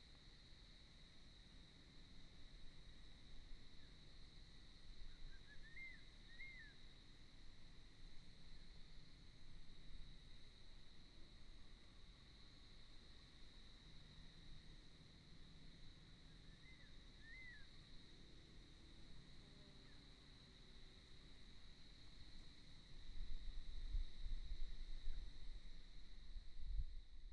旭海路, Mudan Township, Pingtung County - Crested serpent eagle
Bird song, Insect noise, traffic sound, Crested serpent eagle
Binaural recordings, Sony PCM D100+ Soundman OKM II
Pingtung County, Taiwan, 2 April 2018, ~12:00